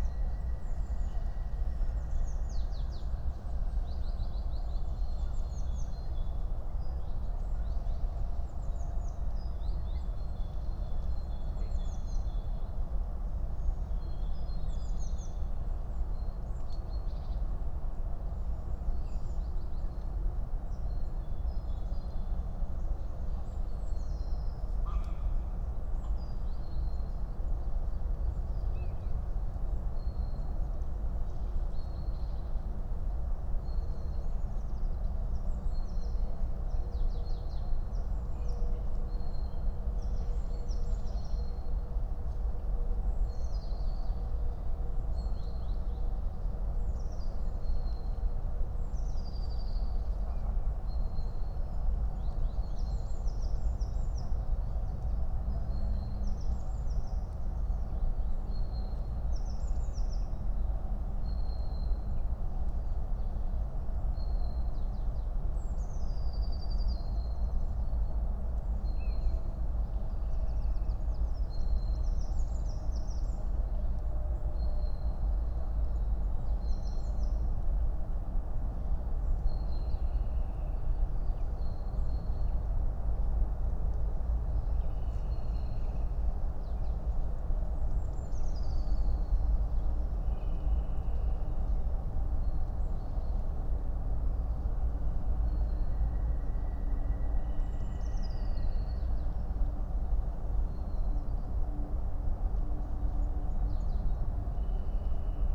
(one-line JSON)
{"title": "Berlin, NSG Bucher Forst - Bogensee, forest pond ambience", "date": "2021-03-01 12:30:00", "description": "(remote microphone: AOM5024/ IQAudio/ RasPi Zero/ LTE modem)", "latitude": "52.64", "longitude": "13.47", "altitude": "54", "timezone": "Europe/Berlin"}